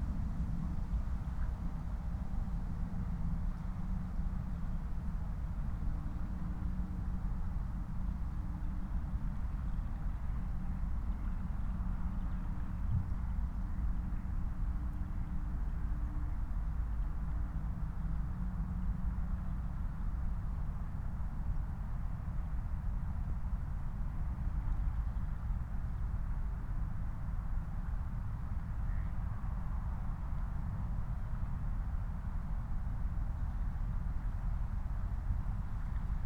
Moorlinse, Berlin Buch - near the pond, ambience
13:19 Moorlinse, Berlin Buch
Deutschland, December 2020